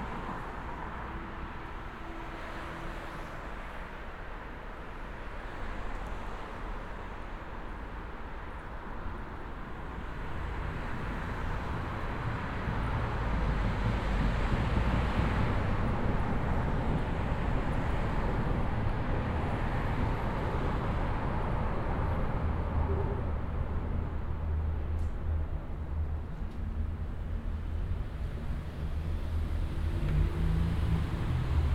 berlin, bülowstr, under rail bridge close to the station